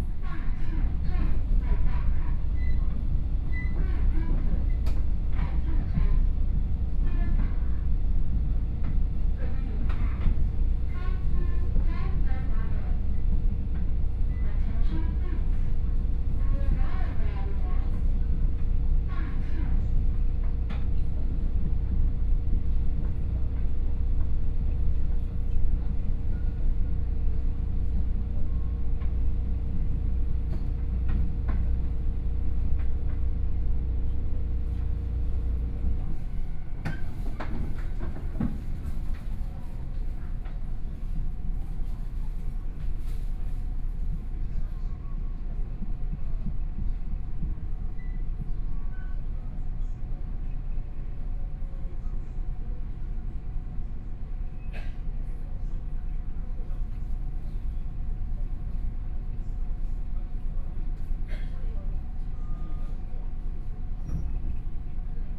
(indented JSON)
{
  "title": "Wuri District, Taichung - Local Express",
  "date": "2013-10-08 11:44:00",
  "description": "from Taichung Station to Wuri Station, Zoom H4n+ Soundman OKM II",
  "latitude": "24.11",
  "longitude": "120.64",
  "altitude": "37",
  "timezone": "Asia/Taipei"
}